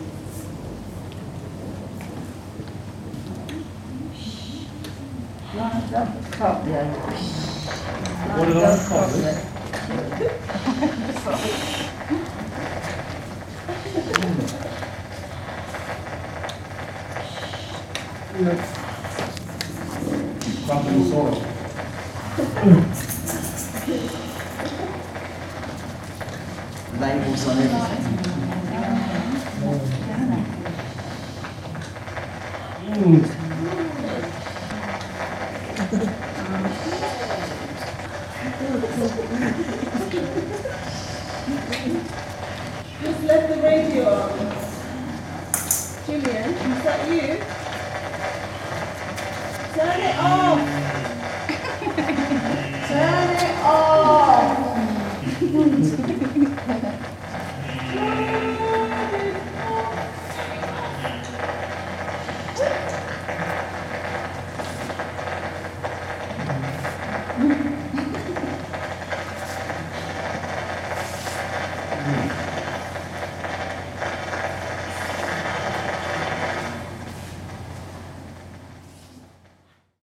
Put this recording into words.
Recorded – lap-top on the floor – during my visit to one of the regular drop-in sessions of ‘Ambient Jam’ in the Albany in Deptford. A group of artists has maintained and developed over a number of years this open space of encounters for more and less handicapped people. On the day of my visit, the artist leading musically through the ‘ambient jamming’ is Charles Hayward. No-Go-Zones radio project meets Entelechy Arts. more recordings archived at: